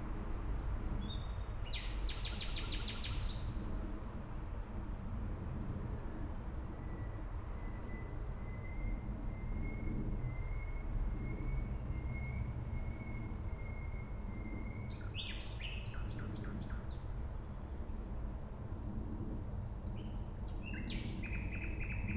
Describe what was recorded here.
park ambience with a quite elaborated nightingale, distant traffic noise, (Sony PCM D50, DPA4060)